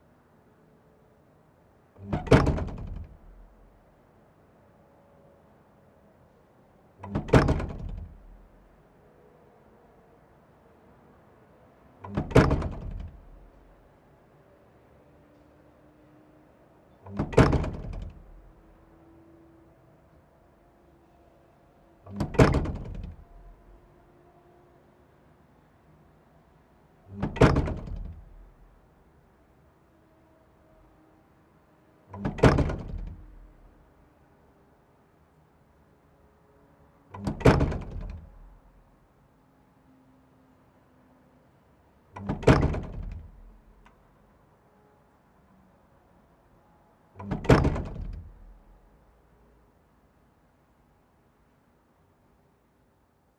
Rue de la Vieille Cour, Arcisses, France - Margon - Église Notre Dame du Mont Carmel
Margon (Eure et Loir)
Église Notre Dame du Mont Carmel
le Glas - manifestement défectueux...
Centre-Val de Loire, France métropolitaine, France